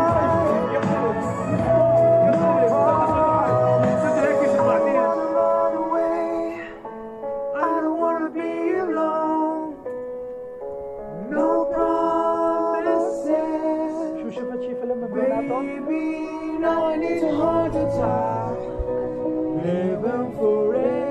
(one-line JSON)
{"title": ":jaramanah: :ameer no promises: - thirtyone", "date": "2008-10-31 10:31:00", "latitude": "33.49", "longitude": "36.33", "altitude": "676", "timezone": "Asia/Damascus"}